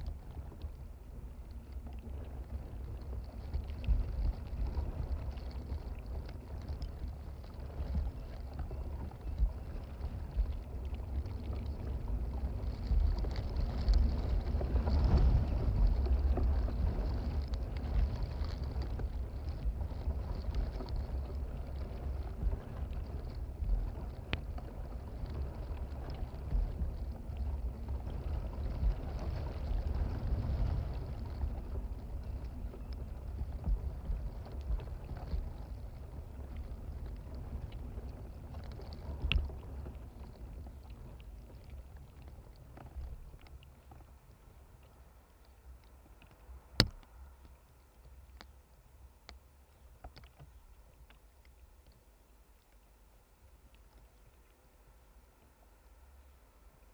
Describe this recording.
Palm trees trunks are covered with matted dry fibres, the remains of leaf fronds from previous years. It's easy to hide a contact microphone amongst them. They sound when a wind blows. Another contactmic picking up the bassier sound of a bigger branch as it meets the trunk recorded in sync is mixed in. DIY piezo contact mics: Triton Bigamp piezo preamps